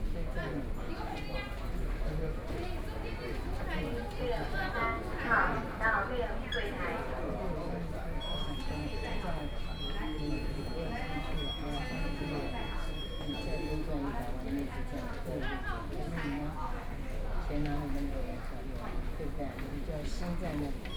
{"title": "Ministry of Health and Welfare, Taipei - Counter waiting", "date": "2013-10-09 10:27:00", "description": "Waiting broadcast message when the file handle, Sony PCM D50+ Soundman OKM II", "latitude": "25.04", "longitude": "121.52", "altitude": "18", "timezone": "Asia/Taipei"}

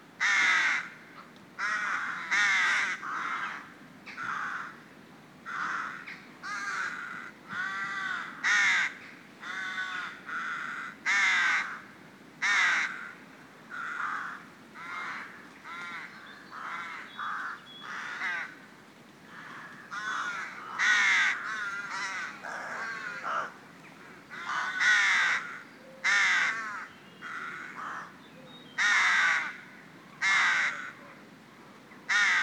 {"title": "Pl View Rd, St Mawes, Truro, UK - Rookery", "date": "2018-03-22 12:29:00", "description": "I got the ferry from Falmouth to St Maws in a stiff westerly wind, but on landing and only after a short walk I came across a lovely sheltered valley with a large Rookery in it. The sound of the sea in the background along with a few seagulls help to set the scene. Sony M10 built-in mics.", "latitude": "50.16", "longitude": "-5.02", "altitude": "37", "timezone": "Europe/London"}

